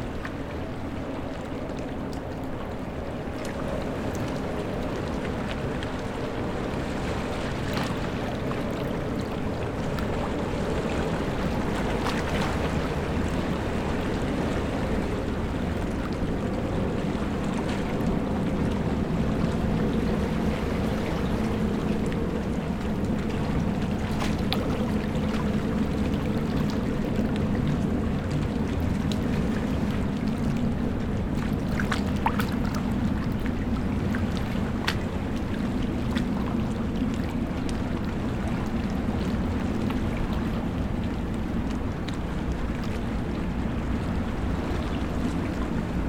Lot. Capo Di Feno, Ajaccio, France - Capo Di Feno 01
Capo Di Feno Beach Sound
Captation ZOOM H6